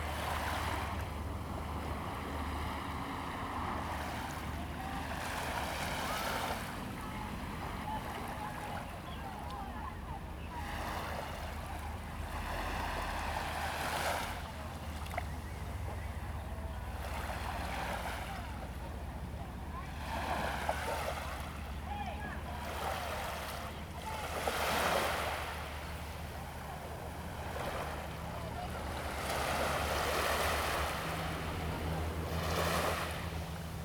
外木山海灘, Keelung City - At the beach
sound of the waves, At the beach
Zoom H2n MS+XY +Sptial Audio